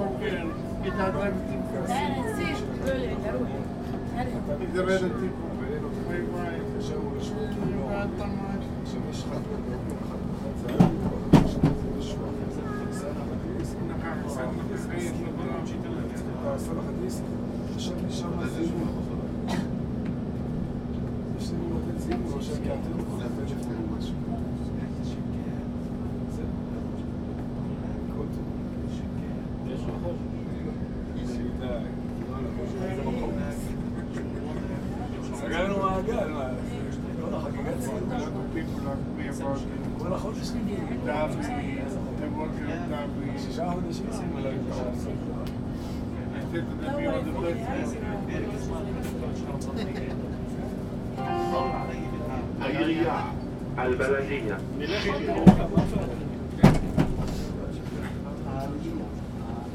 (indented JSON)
{
  "title": "Near Damascus Gate, Jerusalem - Jerusalem Light Rail near Damascus Gate",
  "date": "2015-03-25 12:00:00",
  "description": "Uploaded by Josef Sprinzak",
  "latitude": "31.78",
  "longitude": "35.23",
  "altitude": "765",
  "timezone": "Asia/Hebron"
}